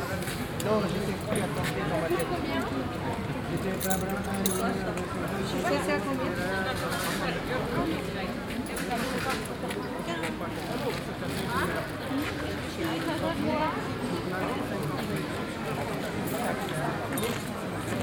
Av. Capart, Jette, Belgique - Flea market ambience
Ambiance brocante.
Tech Note : SP-TFB-2 binaural microphones → Olympus LS5, listen with headphones.